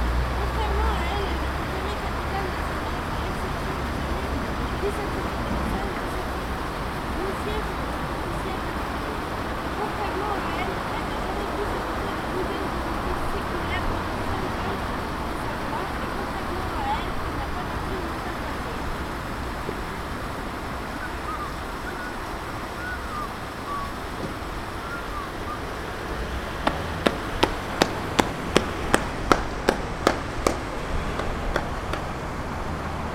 1, place des rencontres 26500 bourg-les-valence
theo new york at bourg les valence
Bourg-lès-Valence, France, March 2011